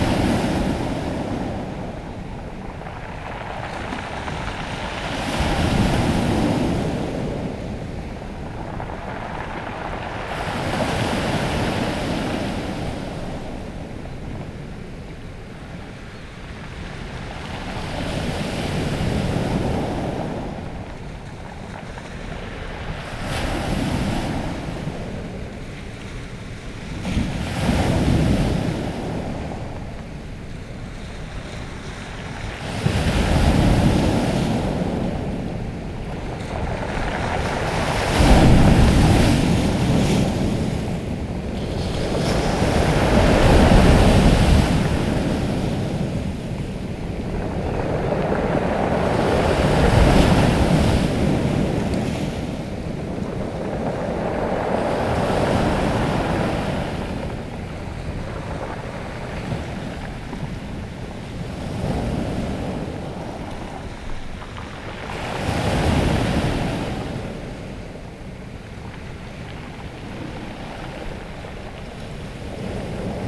Pebble beach remnant Deoksan
Returning to this remnant pebble beach one year on...there is increased military security along the coast in this area...access is restricted...